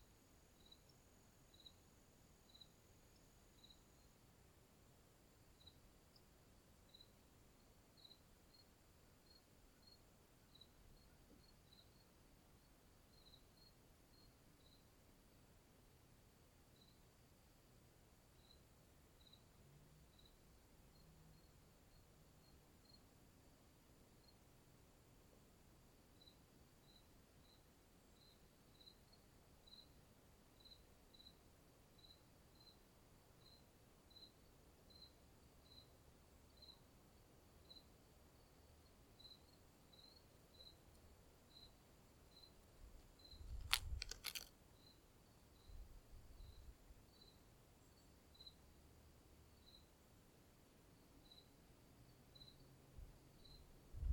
Recording near where the Council Bluff Trail crosses a shut-in tributary of the Black River
Missouri, United States of America, 8 November